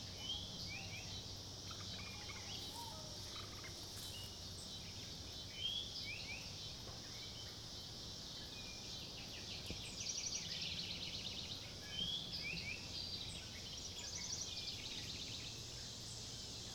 Disc Golf Course, Mississinewa Lake State Recreation Area, Peru, IN, USA - Birdsong and cicadas at Mississinewa Lake
Sounds heard at the disc golf course, Mississinewa Lake State Recreation Area, Peru, IN 46970, USA. Part of an Indiana Arts in the Parks Soundscape workshop sponsored by the Indiana Arts Commission and the Indiana Department of Natural Resources. #WLD 2020